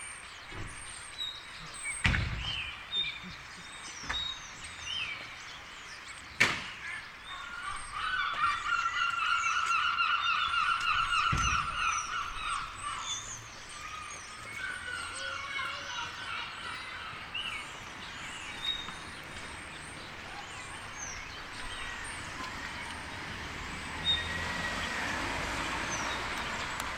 by garages on Portland
contentious site for young people on Portland.